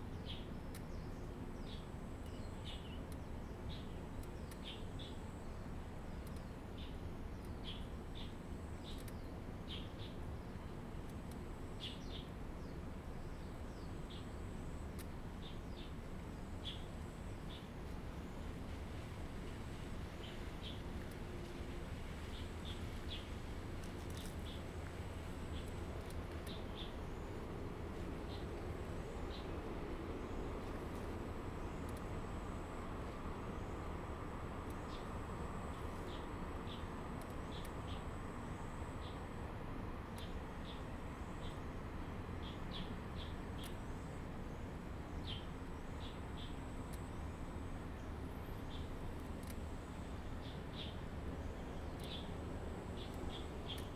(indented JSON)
{
  "title": "富世村, Sioulin Township - Small village",
  "date": "2014-08-27 12:49:00",
  "description": "In the woods, Cicadas sound, Birdsong sound, The weather is very hot, Small village, Noise from nearby factories\nZoom H2n MS+XY",
  "latitude": "24.14",
  "longitude": "121.64",
  "altitude": "40",
  "timezone": "Asia/Taipei"
}